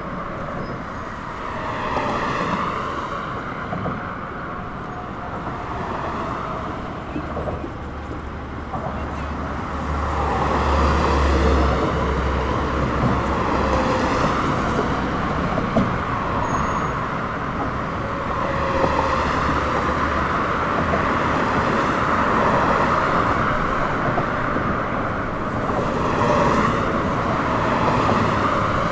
Delancey St/FDR Dr, New York, NY, USA - Crossing the Williamsburg Bridge to Brooklyn
Sounds of traffic leaving Manhattan.
Zoom H6
9 August 2019